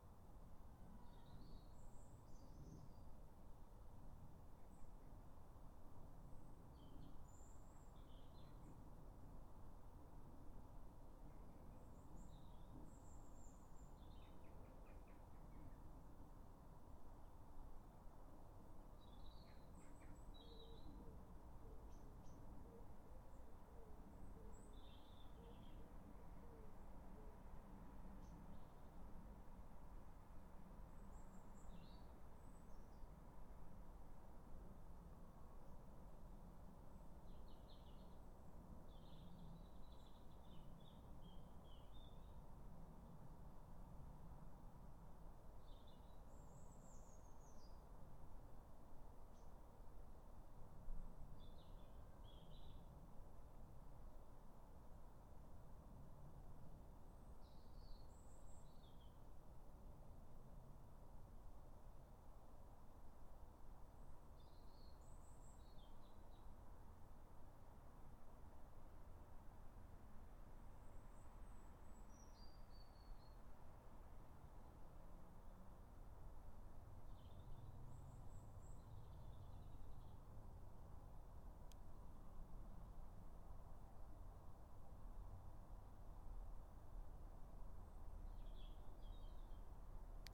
Dorridge, West Midlands, UK - Garden 4
3 minute recording of my back garden recorded on a Yamaha Pocketrak